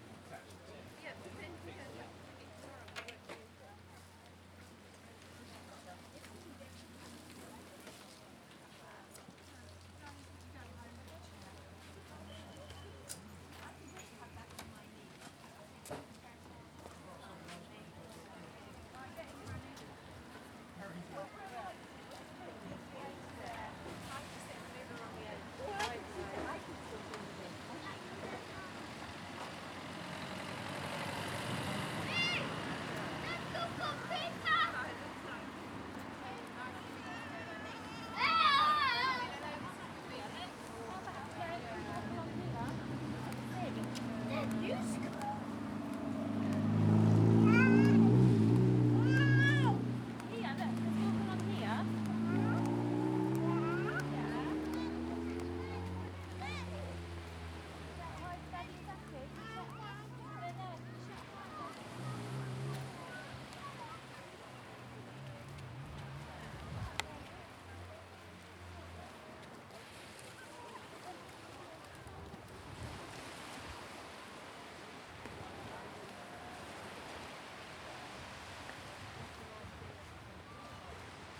S W Coast Path, Swanage, UK - Swanage Seafront Soundwalk
A short soundwalk from an amusement arcade north upwards along the promenade, past rows of beach huts and ending at the small pier at the location marked on the map. (Tascam DR-05 with windshield)